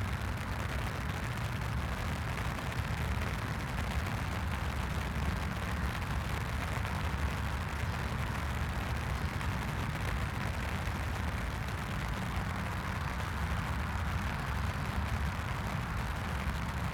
Contención Island Day 16 inner south - Walking to the sounds of Contención Island Day 16 Wednesday January 20th
The Poplars High Street Duke’s Moor Town Moor
The stream is full
jackdaws and crows walk the sodden moor
A flock of black-headed gulls
loafs by a large puddle
they lift and drift off as I approach
A mistle thrush flies off
low
then lifts into a tree
Starlings sit
chatter
and preen
in a short break in the rain
There is enough traffic
to make a constant noise
three 10.00 busses
each empty